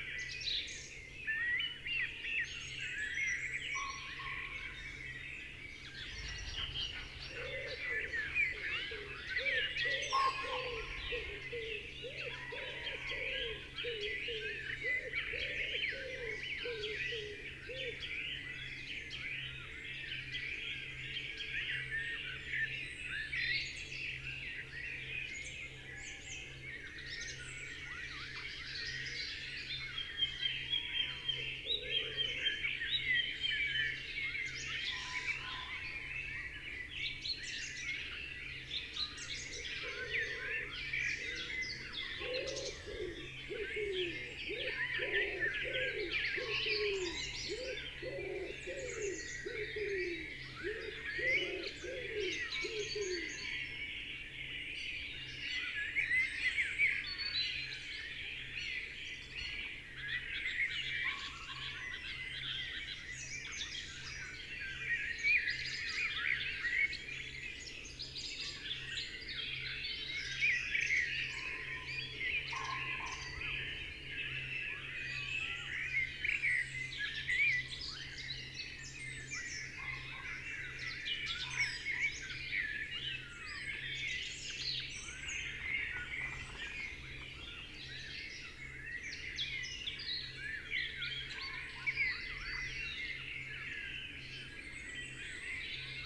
Green Ln, Malton, UK - the wood wakes up ...
the wood wakes up ... pre-amped mics in SASS ... bird calls ... song ... from tawny owl ... pheasant ... wood pigeon ... red-legged partridge ... buzzard ... robin ... blackbird ... song thrush ... wren ... background noise and traffic ... something walks through at 17:00 ... could be roe deer ...